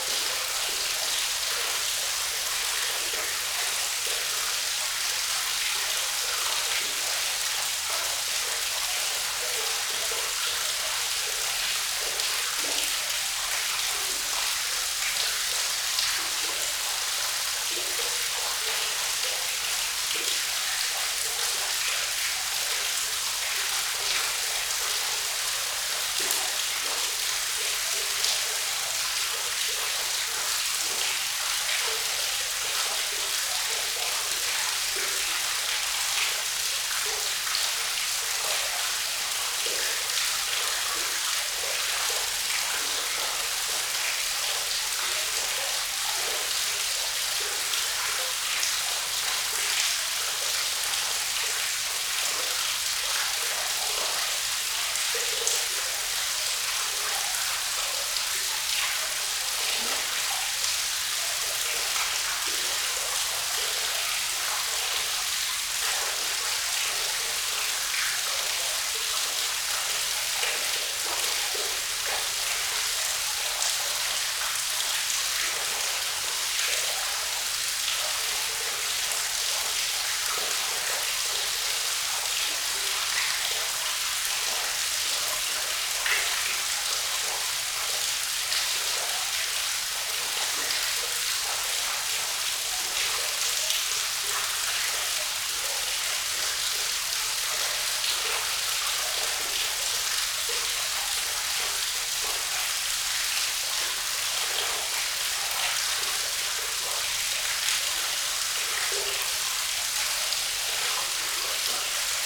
{"title": "Kožbana, Dobrovo v Brdih, Slovenia - Krčnik gorge", "date": "2020-08-22 08:43:00", "description": "Stream Krčnik in a gorge with waterfall. Microphones were hanging in the air. Microphones: Lom Uši Pro.", "latitude": "46.04", "longitude": "13.53", "altitude": "190", "timezone": "Europe/Ljubljana"}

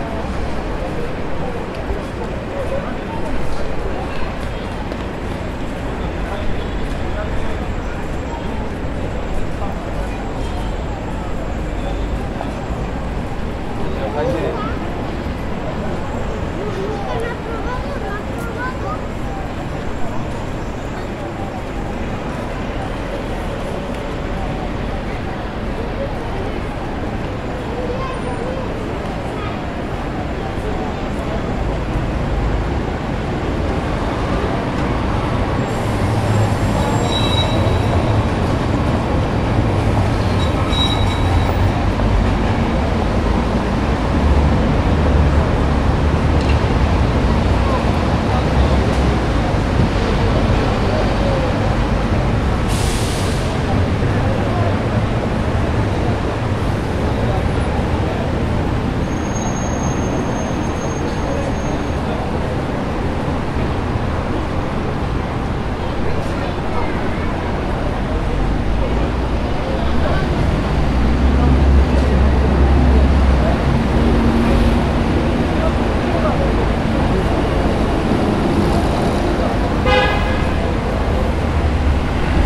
{"title": "bilbao arenal drive 2", "description": "another\negistrazione of sound in arenal drive. More people sound than the previous", "latitude": "43.26", "longitude": "-2.92", "altitude": "9", "timezone": "Europe/Berlin"}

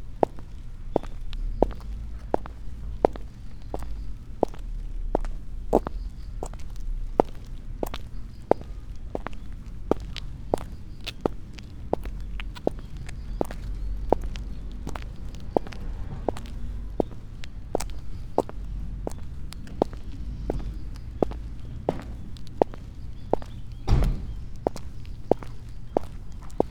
{"title": "Myoken-ji temple, Kyoto - stone path, walk", "date": "2014-10-31 12:11:00", "latitude": "35.03", "longitude": "135.75", "altitude": "66", "timezone": "Asia/Tokyo"}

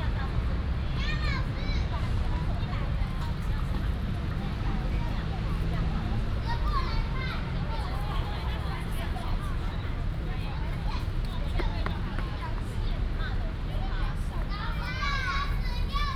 {"title": "傅園, National Taiwan University - learning to climb a tree", "date": "2016-03-04 15:03:00", "description": "In college, Children are learning to climb a tree", "latitude": "25.02", "longitude": "121.53", "altitude": "18", "timezone": "Asia/Taipei"}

